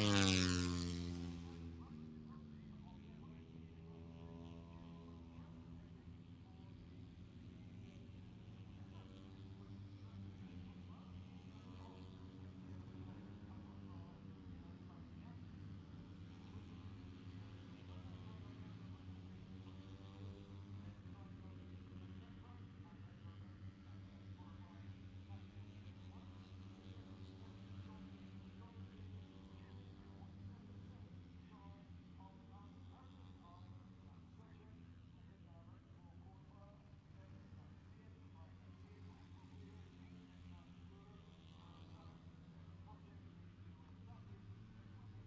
british motorycle grand prix 2019 ... moto three ... free practice two contd ... maggotts ... lavalier mics clipped to bag ...